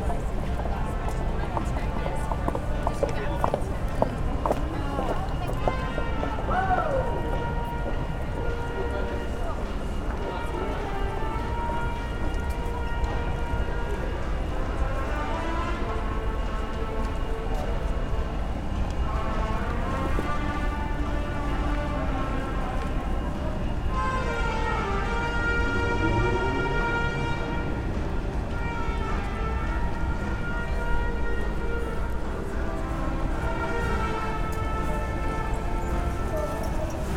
Manchester City Centre - Manchester Buskers
Perhaps the most annoying buskers in the world!